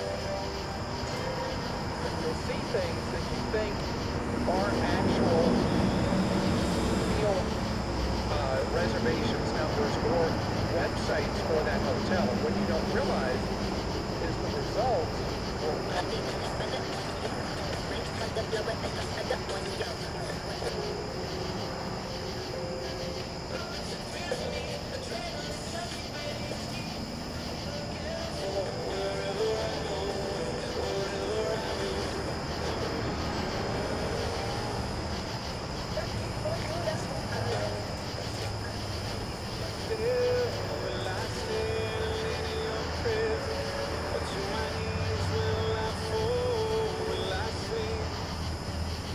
W Arthur Hart St, Fayetteville, AR, USA - Late-night FM and Open Window (WLD2018)
A brief survey of the FM band with the bedroom window open in Fayetteville, Arkansas. Also traffic from Highway 71/Interstate 49, about 200 feet away, and cicadas. For World Listening Day 2018. Recorded via Olympus LS-10 with built-in stereo mics.